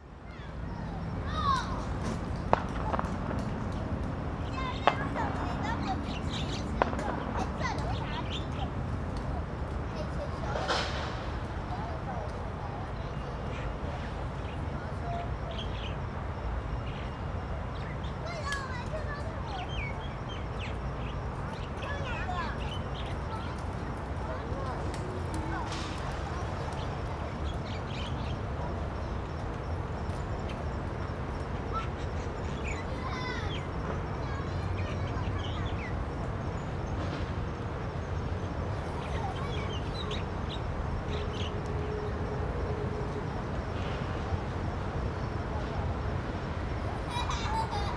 光復賞鳥綠地, Banqiao Dist., New Taipei City - Child and mother
In Riverside Park, Child and mother, Children are learning to roller blading, Traffic Sound, Firecrackers
Zoom H4n+Rode NT4
New Taipei City, Taiwan, 19 January, 14:51